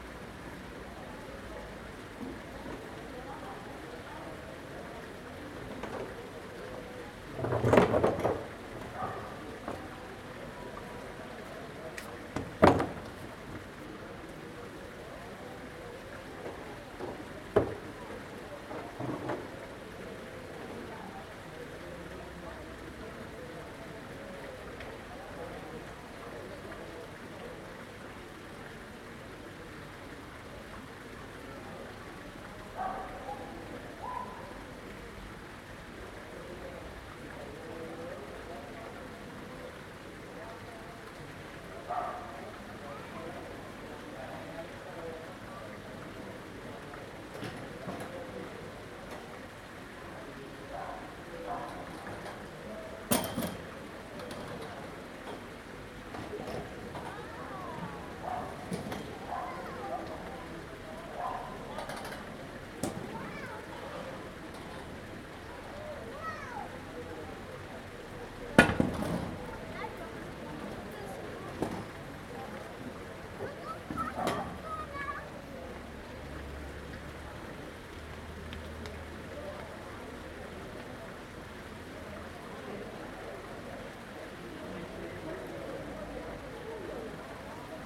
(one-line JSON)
{"title": "Ronda, Prowincja Malaga, Hiszpania - Setting up", "date": "2014-10-18 11:55:00", "description": "Cafe setting up it's tables, fountain, German tourists and stray dogs. Recorded with Zoom H2n.", "latitude": "36.74", "longitude": "-5.17", "altitude": "727", "timezone": "Europe/Madrid"}